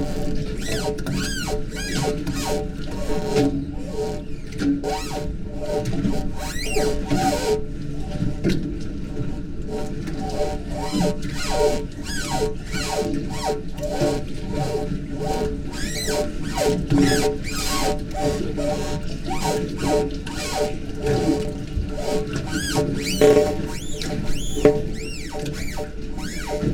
Disused ferry wharf :: Jungdo-dong, Chuncheon, Gangwon-do, South Korea - Disused ferry wharf
...a floating wharf from which a ferry service previously operated...